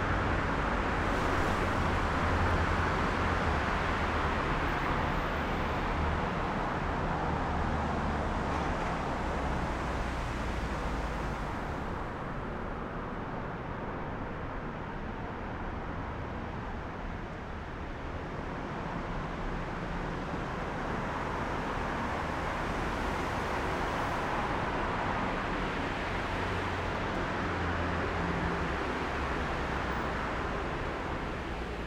Perugia, Italia - the mouth of the Kennedy tunnel
traffic in front of the tunnel
[XY: smk-h8k -> fr2le]